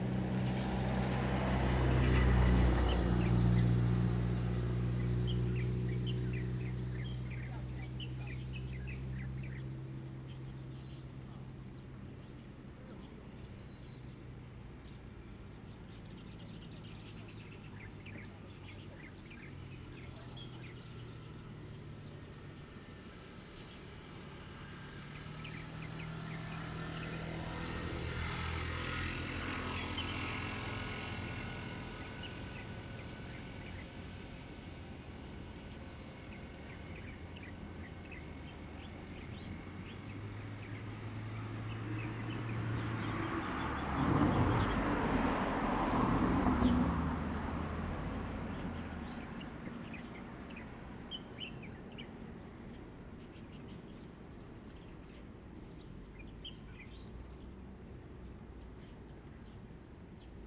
北埔村, Xincheng Township - Birds
Birds, In a small park, Traffic Sound, The weather is very hot
Zoom H2n MS+XY